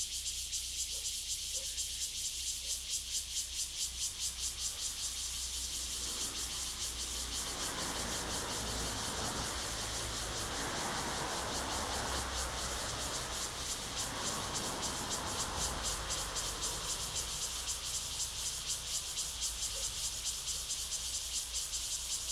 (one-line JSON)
{"title": "Sec., Zhonghua Rd., Luye Township - Cicadas and Traffic Sound", "date": "2014-09-07 09:28:00", "description": "Cicadas sound, Birdsong, Traffic Sound, Small village, Near the recycling plant\nZoom H2n MS+ XY", "latitude": "22.95", "longitude": "121.14", "altitude": "196", "timezone": "Asia/Taipei"}